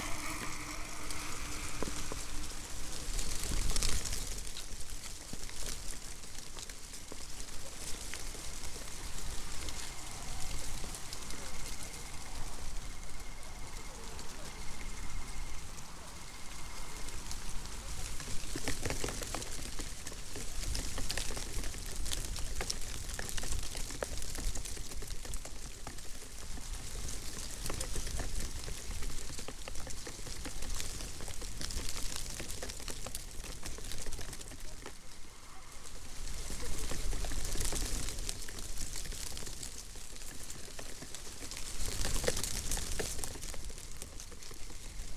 Unnamed Road, Tbilisi, Georgia - Evening at Lisi Lake
Late evening at Lisi Lake far from noises of Tbilisi. Path around the lake is the favorite place of local people for walking, jogging and cycling. Passerby tells about unhappy love and freedom, warm wind rustles dry leaves, bicycle passes, crickets chirping.